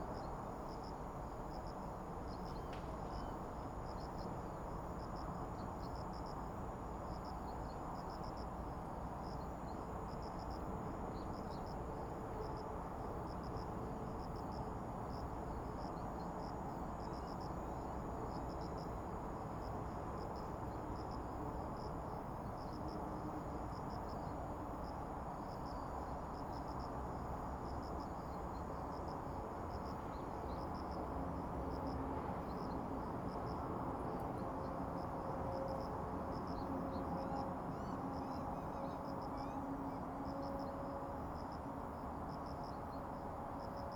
金獅步道, 新竹縣湖口鄉 - traffic sound
Near high-speed railroads, traffic sound, birds sound, Suona
Zoom H2n MS+XY